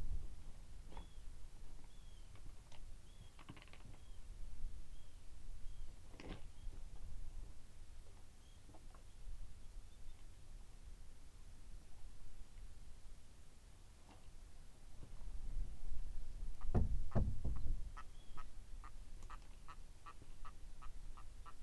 Nesbister böd, Whiteness, Shetland, UK - Listening from the stone steps of the böd
This is the evening quiet outside the böd, as documented by the little on board microphones on the EDIROL R-09. The situation was very nice, some terns circling above, the heavy wooden doors of the böd gently thudding when stirred by the wind, some tiny insects browsing the rotting seaweed strewn around the bay, the sound of my steps receding into the long, pebbly curve of the beach, and distant baas from sheep and cries from geese occasionally entering into the mix. I loved the peace at Whiteness.